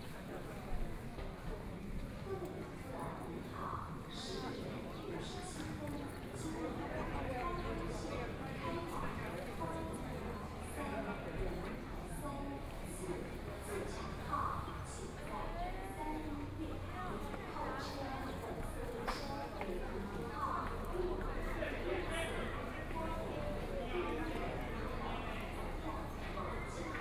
Taitung Station, Taiwan - Station hall
Travelers to and from the Station hall, Messages broadcast station, Binaural recordings, Zoom H4n+ Soundman OKM II
18 January, 10:53